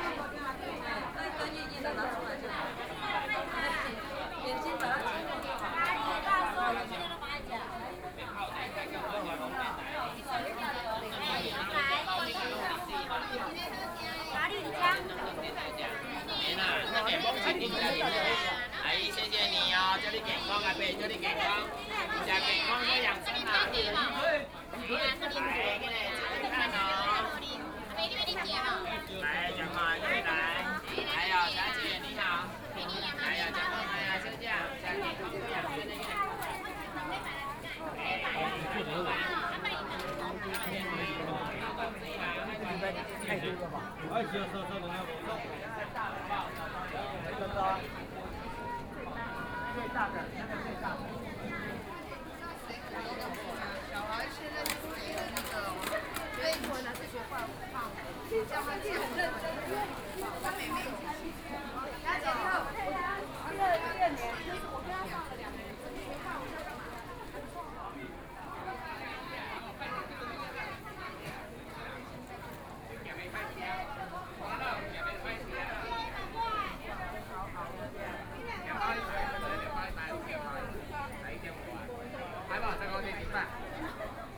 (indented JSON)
{
  "title": "Qingshui St., Tamsui Dist. - Traditional Market",
  "date": "2013-11-17 11:24:00",
  "description": "Walking through the traditional market, Market within a very narrow alley, Binaural recordings, Zoom H6+ Soundman OKM II",
  "latitude": "25.17",
  "longitude": "121.44",
  "altitude": "14",
  "timezone": "Asia/Taipei"
}